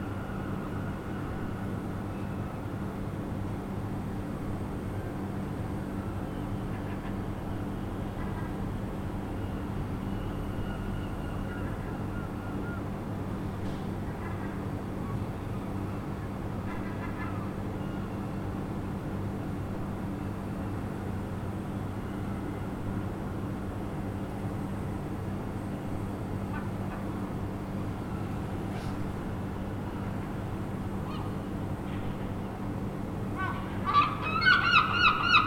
Brighton - Early Morning Seagulls
Seagulls, an early morning natural alarm clock